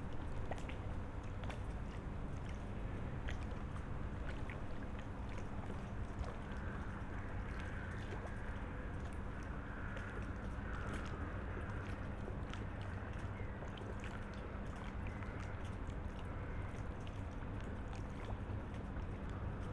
Berlin, Plänterwald, Spree - early winter afternoon
place revisited on an early winter afternoon.
(SD702, AT BP4025)